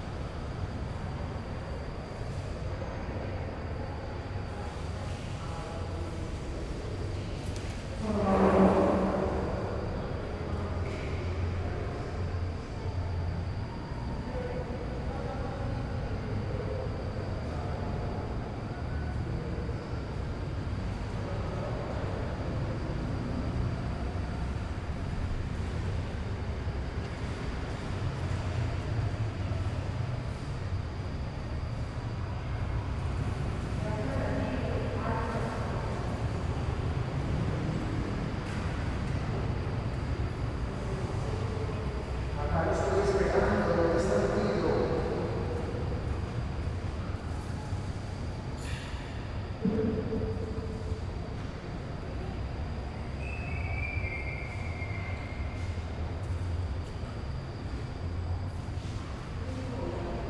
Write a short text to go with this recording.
Se escucha los grillos, personas hablando, el sonido de bus y moto, el sonido de cosas siendo arrastradas.